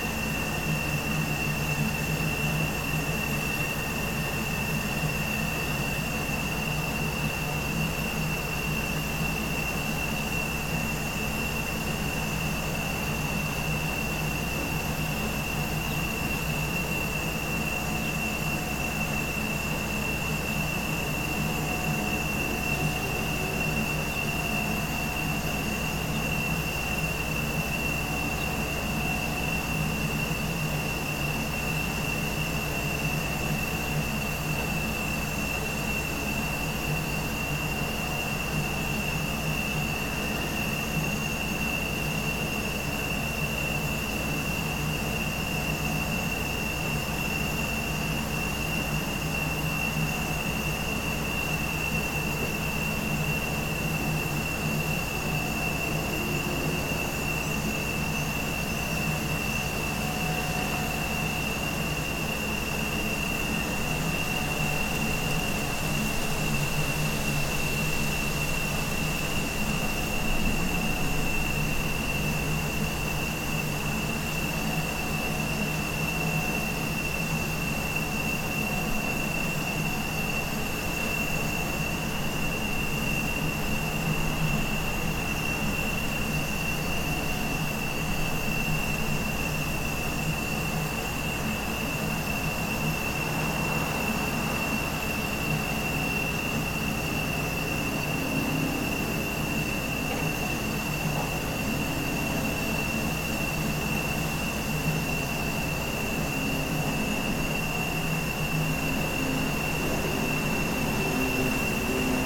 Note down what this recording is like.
General atmosphere, centered around a drone of a single gasbox, recorded with ZOOM H5 amidst industrial building block.